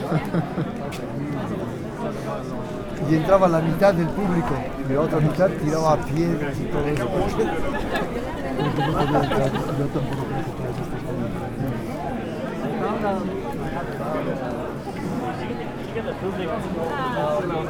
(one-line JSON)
{"title": "Fabriksområdet, København, Denmark - Discussions", "date": "2017-03-17 19:02:00", "description": "People talking before cultural event\nDiscussions, avant événement culturel", "latitude": "55.67", "longitude": "12.60", "altitude": "2", "timezone": "GMT+1"}